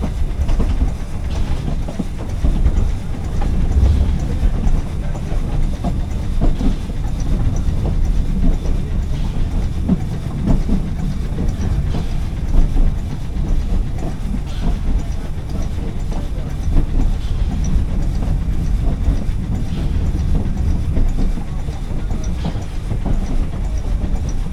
Steamers Descent from Torpantau, Merthyr Tydfil, Wales, UK - Steam Train
A narrow guage steam train makes the easy descent from the highest point on this railway in the Brecon Beacons National Park. The recorder and two mics are on the floor of the guard's van and the shotgun pointing along the length of the short train through an open doorway. There are glimpses of the Welsh accent and sounds of the train.
MixPre 3 with 2 x Rode NT5s + Rode NTG3. I always use omni capsules on the NT5s.